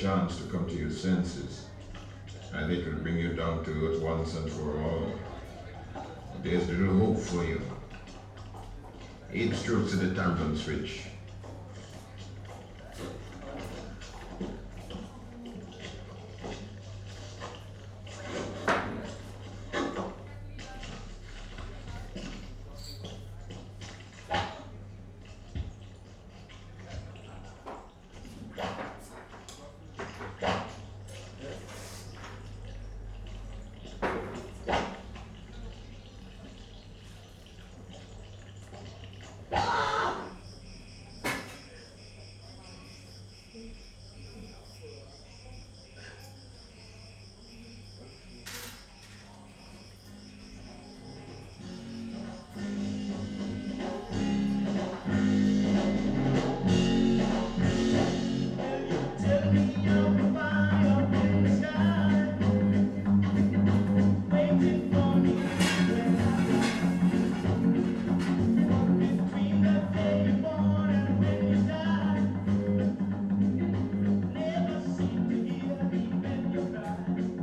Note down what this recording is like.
film screening of "the harder they come", the city, the country & me: may 21, 2011